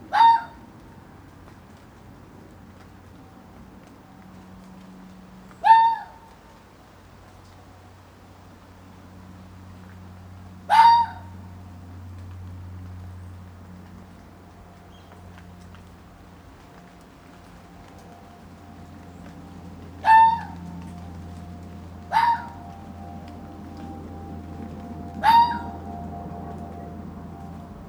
London Borough of Lewisham, Greater London, UK - Close Fox in the rain under the Flightpath
By the edge of Hither Green Cemetery on a rainy evening this young fox is disturbed by my presence. A man in the next garden had swept an area and left some food but the fox had to go past me to get to it. He was not happy. The flight path into Heathrow Airport is quite low here. Planes are constantly overhead with few gaps between.